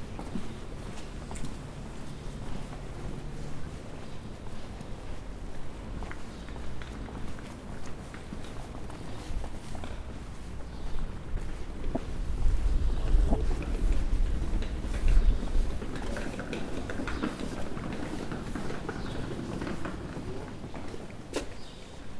Poschiavo, caminare
Spaziergang durch Poschiavo, südliches Flair in Strömen
Poschiavo, Switzerland, 17 July, ~15:00